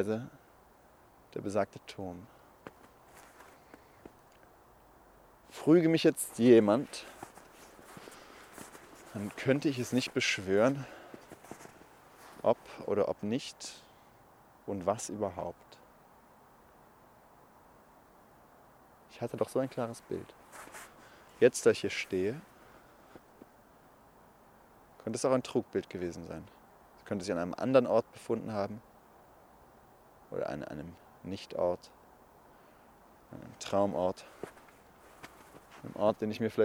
Erinnerungsspaziergang am Mühlenbach
Ein Erinnerungsspaziergang, dessen Eindrücke direkt festzuhalten versucht wurden. Orte der Kindheit sind melancholische Orte, wenn man sie wieder aufsucht, sie verursachen jene Unruhe, sich nicht mehr sicher zu sein. So hält man sich an Bildern fest, denen man Ewigkeit zuschreiben möchte, aber meistens sieht dort alles schon ganz anders aus.
Bonn, Germany, 20 December 2010, ~19:00